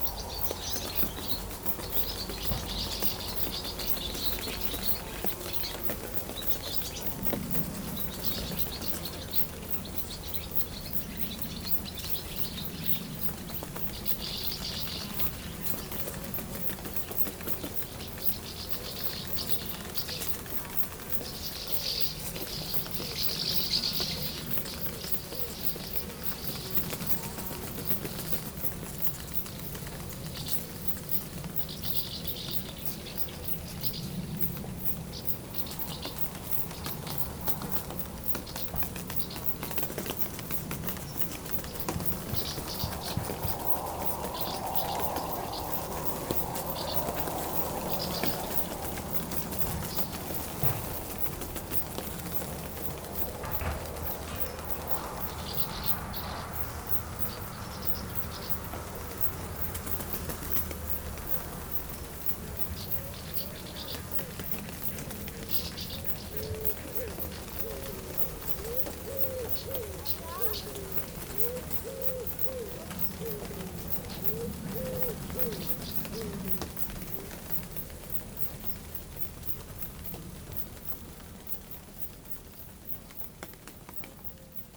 Lombron, France - Greenhouses
The Lombron farm is a huge gardening farm, producing a gigantic diversity of vegetables. Into the greenhouses, there's a lot of insects, prisonners into the tarpaulins. These insects try to go out, it makes the innumerable poc-poc sounds on the transparent tarpaulins. Outside, swallows wait, and regularly catch every insect going out.
14 August